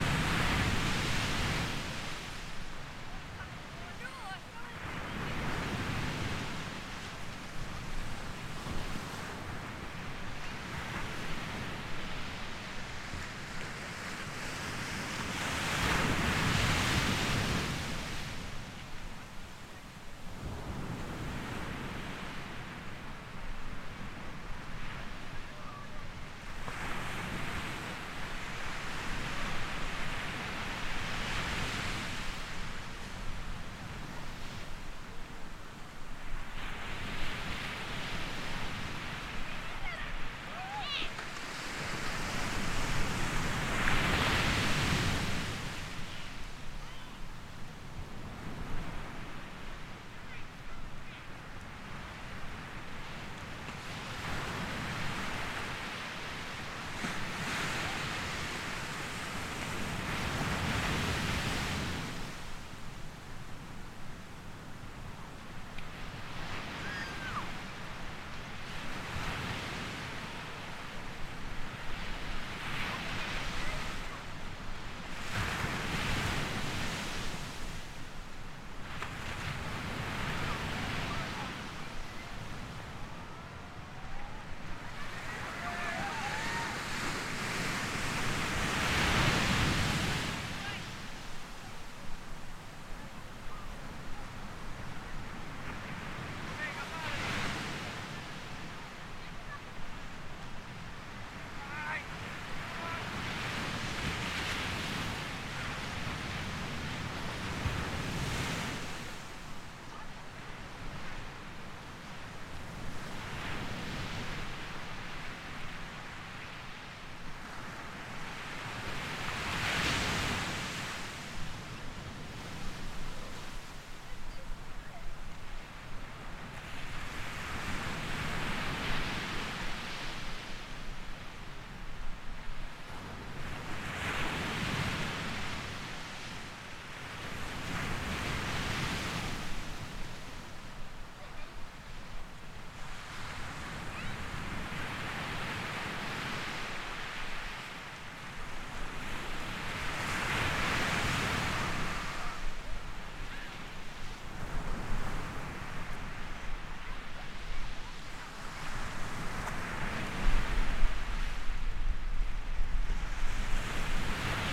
Estrada da Praia, Malpica, La Coruña, Espagne - Waves at Praia de Seaia
Waves at Praia De Seaia, Malpica, Galicia, Spain, Zoom H6
18 August 2019, 17:58